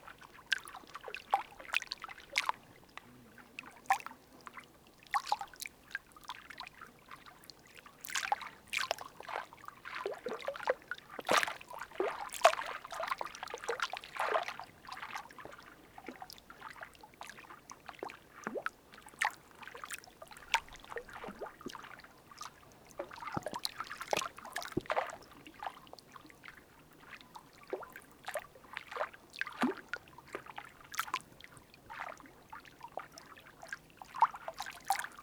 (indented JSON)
{
  "title": "Saint-Martin-de-Boscherville, France - River bank",
  "date": "2016-09-18 13:30:00",
  "description": "On the river embankment, the soft sound of the water flowing.",
  "latitude": "49.44",
  "longitude": "0.94",
  "timezone": "Europe/Paris"
}